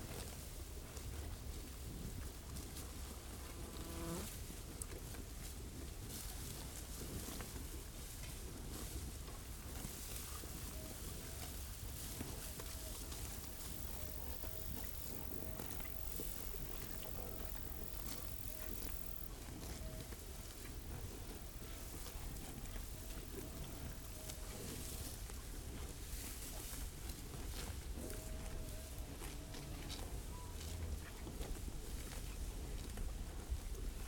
cows chewing grass in Vorumaa